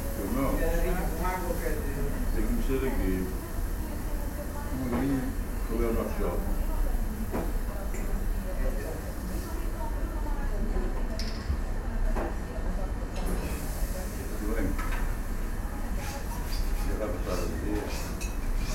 Porto, Praça da Libertade, cafè